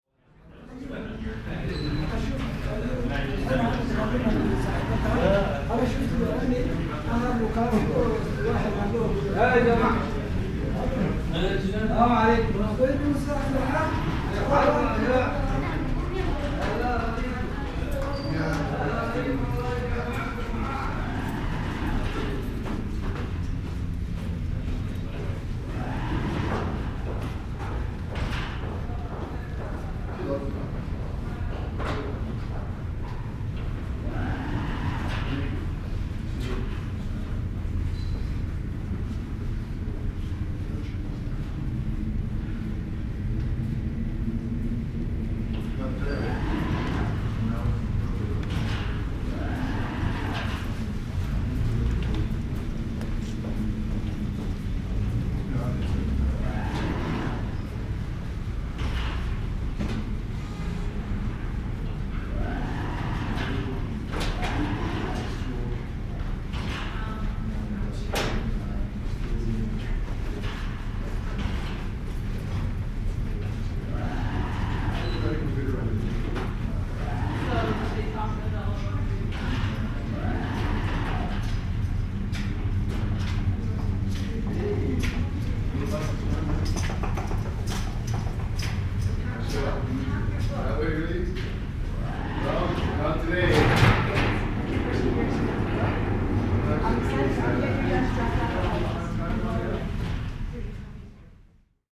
Calgary +15 5th Ave SW bridge
sound of the bridge on the +15 walkway Calgary
Alberta, Canada